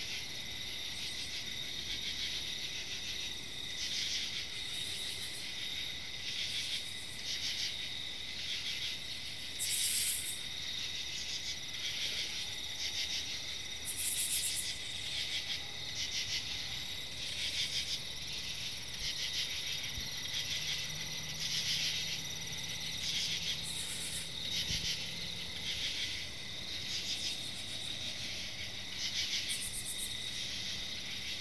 Serpentine Trail, Owings Mills, MD, USA - Serpentine Trail
An orchestra of crickets and cicadas play out in the night, an hour northwest from Baltimore.
9 September 2019, ~10:00